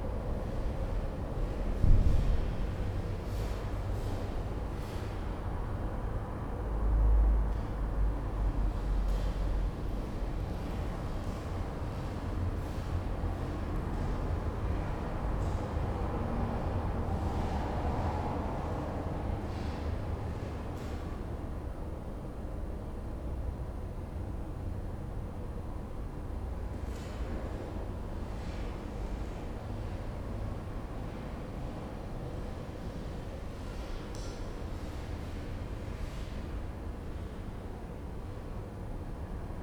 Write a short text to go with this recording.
V chrámu sv. Bartoloměje, na věži a na náměstí.